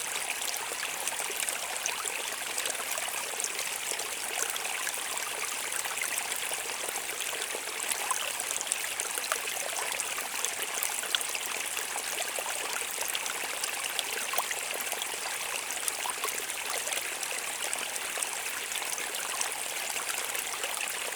Chez Lemaitre, Saint-Pierre-la-Bourlhonne, Ruisseau
Creek, water, Auvergne
France, 17 August 2010, ~23:00